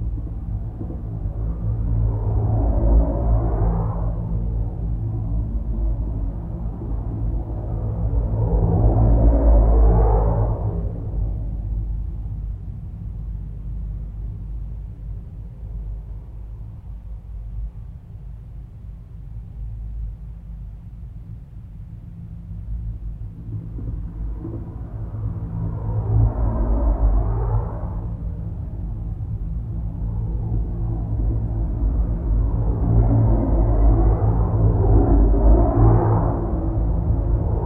Metabolic Studio Sonic Division Archives:
Ambient Highway 395 traffic sounds as heard through cattle guard grate next to roadway. Recorded on H4N with shure VP64 microphone inside cattle guard piping structure
CAL Fire Southern Region, California, United States, August 2014